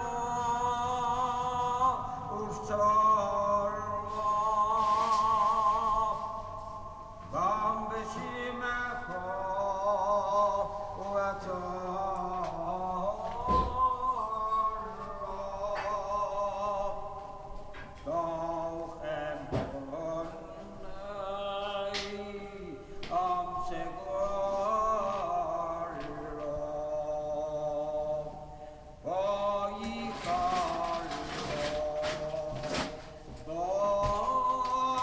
Spanish Synagogue, jeruzalemska street
Cantor of the Brno Jewish community Arnošt Neufeld sings service in the Spanish Synagogue in Prague